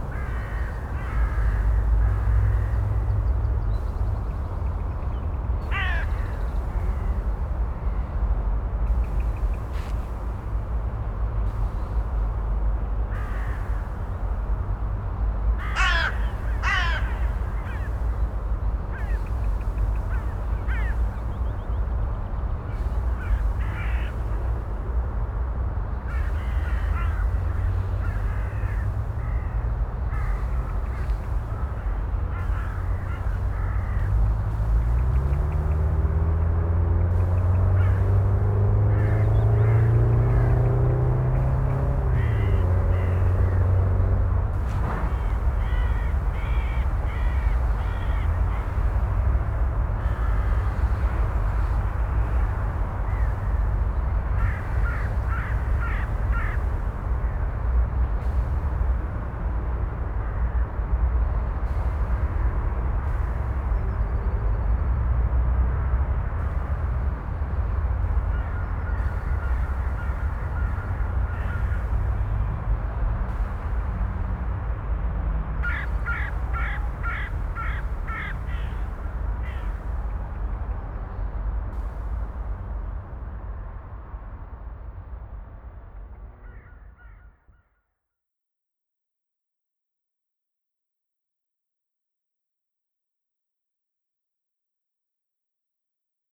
Nordviertel, Essen, Deutschland - essen, thyssen-krupp, fallow ground
In einem ehemaligen Industrieareal der Firma Thyssen-Krupp nahe einem altem Förderturm. Derr klang von Krähen in dem verlassenen Gelände und in weiterer Entfernung der Klang eines ferngesteuerten Fahrzeugs mit elektrischem Motor.
Inside a formerly industrial used areal of the company Thyssen-Krupp near an old shaft tower. The sound of crows and in the distance the high pitch signal of an remote controlled electric motor car.
Projekt - Stadtklang//: Hörorte - topographic field recordings and social ambiences